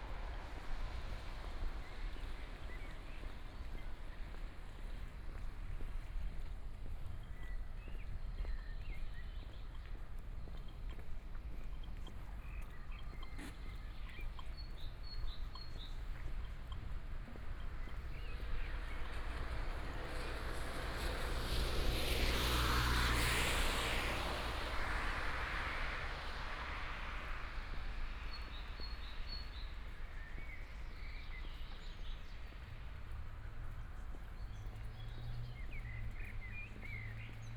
Bavariaring, Munich 德國 - Walking the streets in the morning
Morning, walking the streets, Traffic Sound, Voice traffic lights